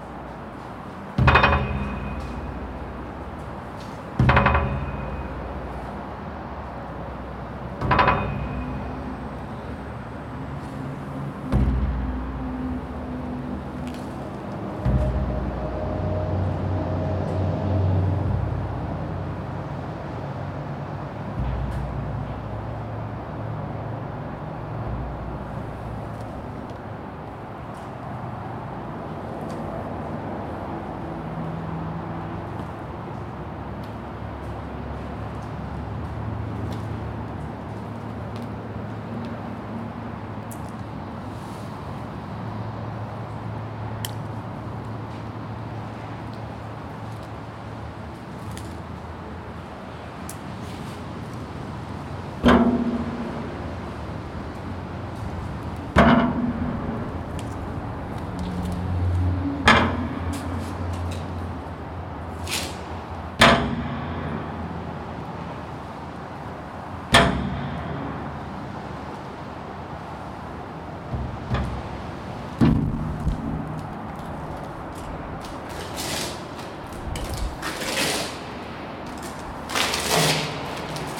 Sulphur point motorway walk underpass, Northcote Point, Auckland, New Zealand - Sulphur point motorway walk underpass
Recording made under the motorway en-route to Sulphur Beach Reserve
26 September 2019, 10:56